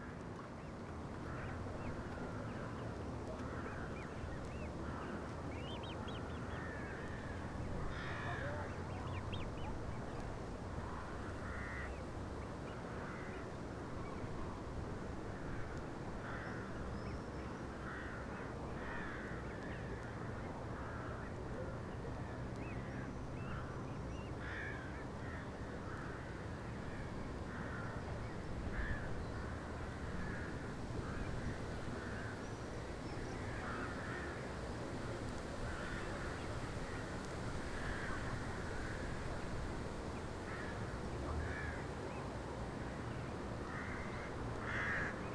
Lithuania, Utena, from the pontoon bridge
standing on the pontoon bridge